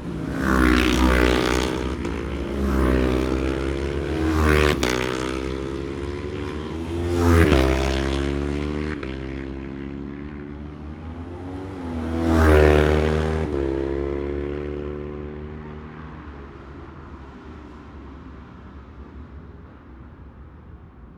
Jacksons Ln, Scarborough, UK - Gold Cup 2020 ...
Gold Cup 2020 ... new comers practice and twins practice ... Memorial Out ... Olympus LS14 integral mics ...